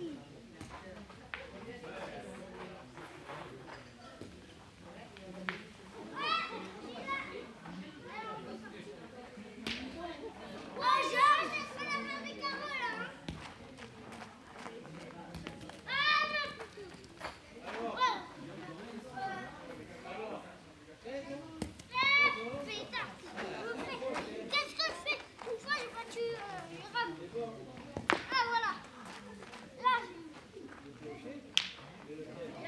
Sigale, Frankreich - Sigale, Alpes-Maritimes - Two boys playing Petanque
Sigale, Alpes-Maritimes - Two boys playing Petanque.
[Hi-MD-recorder Sony MZ-NH900, Beyerdynamic MCE 82]